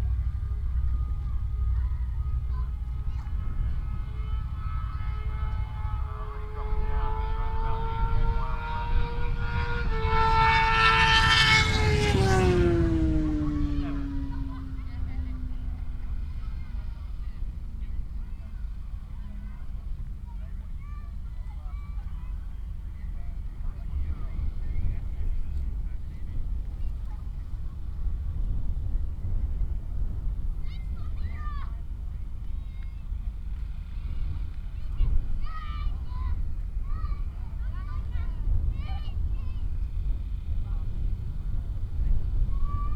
Glenshire, York, UK - Motorcycle Wheelie World Championship 2018 ...
Motorcycle Wheelie World Championship 2018 ... Elvington ... 1 Kilometre Wheelie ... open lavalier mics clipped to a sandwich box ... blustery conditions ... positioned just back of the timing line finish ... all sorts of background noise ...
18 August 2018